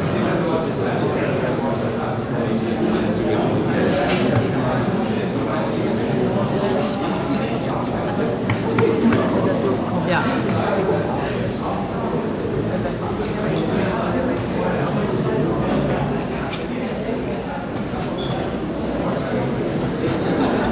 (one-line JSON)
{"title": "Mitte, Kassel, Deutschland - Kassel, museum Fridericanium, art audience", "date": "2012-09-13 11:30:00", "description": "Inside the museum Fridericianium on the first floor during the documenta 13. The sound of the art audience in the crowded hall.\nsoundmap d - social ambiences, art places and topographic field recordings", "latitude": "51.31", "longitude": "9.50", "altitude": "161", "timezone": "Europe/Berlin"}